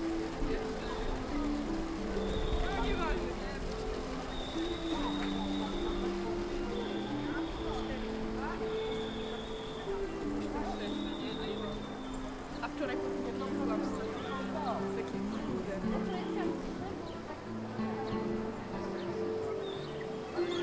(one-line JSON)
{"title": "Śródmieście, Gdańsk, Poland, at the fountain", "date": "2014-08-13 18:40:00", "latitude": "54.35", "longitude": "18.65", "altitude": "10", "timezone": "Europe/Warsaw"}